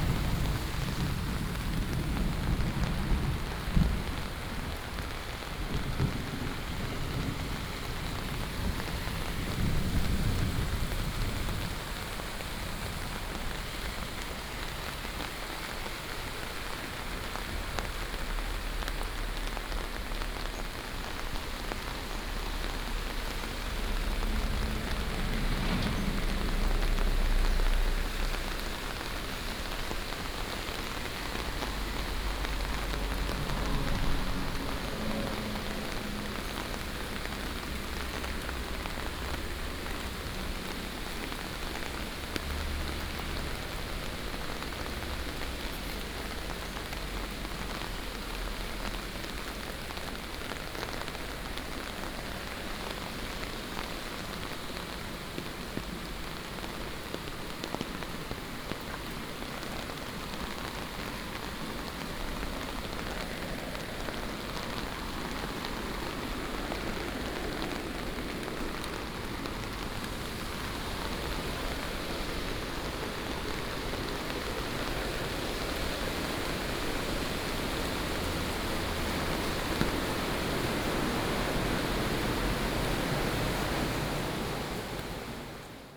Taomi Ln., Puli Township, Taiwan - Thunderstorm
Walking in a small alley, Thunderstorm, The sound of water streams